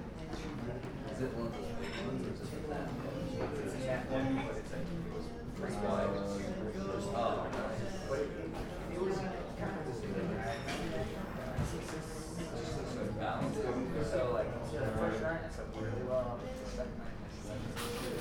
neoscenes: Trident Cafe and Bookstore
CO, USA, 16 June 2010